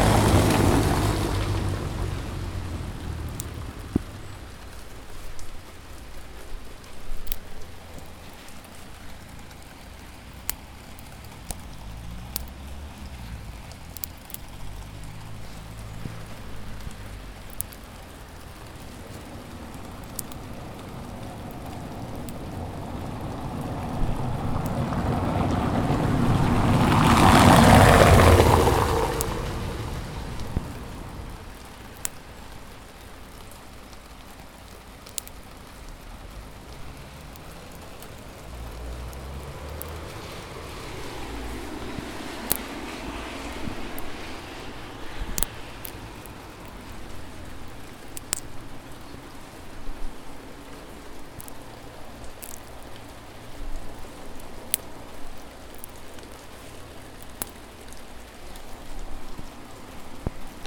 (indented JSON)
{
  "title": "Quayside, Newcastle upon Tyne, UK - Quayside",
  "date": "2019-10-13 15:52:00",
  "description": "Walking Festival of Sound\n13 October 2019\nSnapping twigs and taxi on cobbles. Heavy rain.",
  "latitude": "54.97",
  "longitude": "-1.59",
  "altitude": "12",
  "timezone": "Europe/London"
}